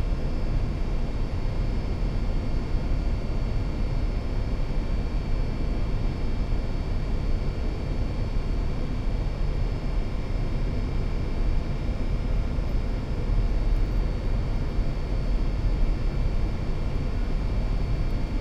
Hauptbahnhof Bremen - platform 8 ventilation drone
exhaust air fan drone at platform 8, Bremen main station
(Sony PCM D50, Primo EM172)
Bremen, Germany, 5 June 2016, 20:30